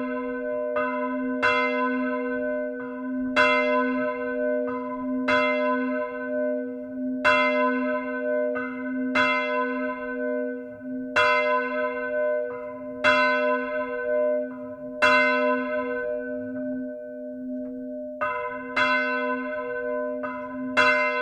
Rue de l'Église, Fontaine-Simon, France - Fontaine Simon - Église Notre Dame
Fontaine Simon (Eure et Loir)
Église Notre Dame
Volée manuelle